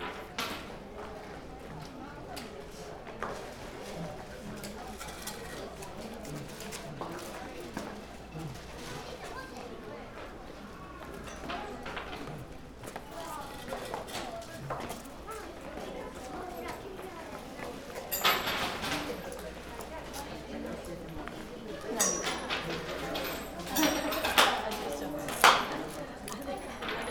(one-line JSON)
{"title": "Osaka, Osaka Castle area, Hōkoku Shrine - Shintō believers at the shrine", "date": "2013-03-30 18:38:00", "description": "each person walks up to the entrance, throws a coin into a box, claps twice, bows and in silence makes a humble request or expresses gratitude at the shrine resident.", "latitude": "34.68", "longitude": "135.53", "altitude": "30", "timezone": "Asia/Tokyo"}